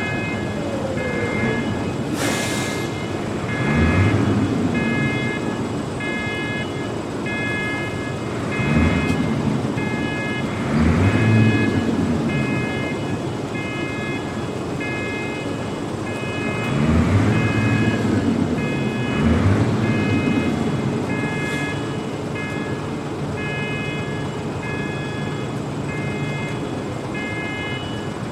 Boulogne-sur-Mer, Quai de l'Europe - BsM, Quai de l'Europe
Unloading a cargo vessel. Zoom H2.
15 April 2009, 21:06